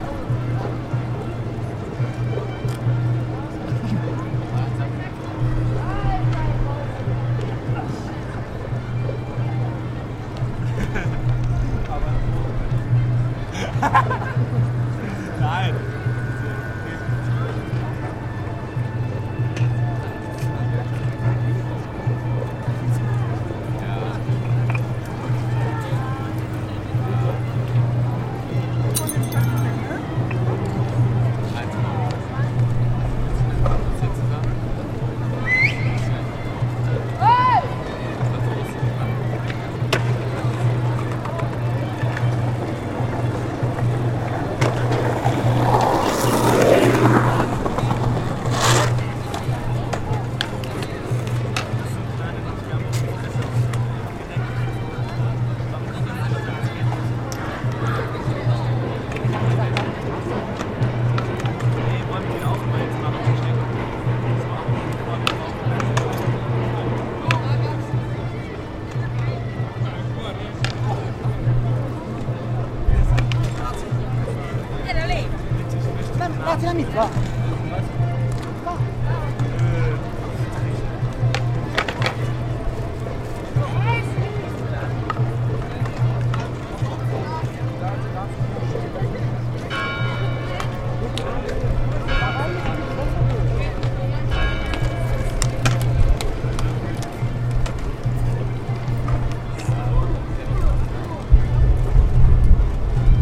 Innenstadt, Frankfurt am Main, Deutschland - Zeil Frankfurt Aufgang Hauptwache
Details about sound: crowd, skateboarding, street music, bells of St.Katharinen church